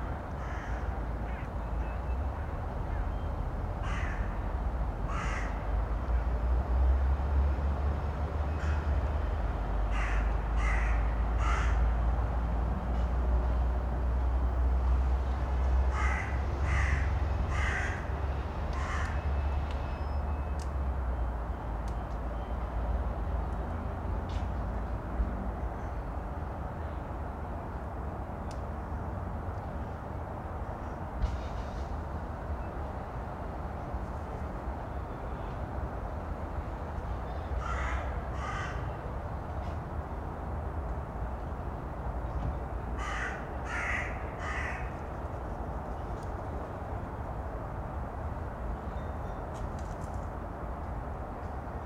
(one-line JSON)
{"title": "Vilnius, Lithuania, crows over cinema studio decorations", "date": "2020-02-21 13:25:00", "description": "territory of Lithuania cinema studio. decoration castle was built for some movie. now it's like some half abandoned territory, warehouse.", "latitude": "54.68", "longitude": "25.22", "altitude": "101", "timezone": "Europe/Vilnius"}